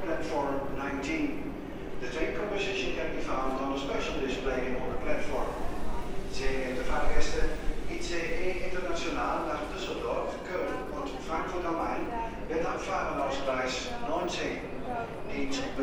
Platform, Utrecht, Niederlande - utrecht main station platform 2019
Walk to the platform from the station hall. The international train is delayed, several anouncements, other trains.
Recorded with DR-44WL.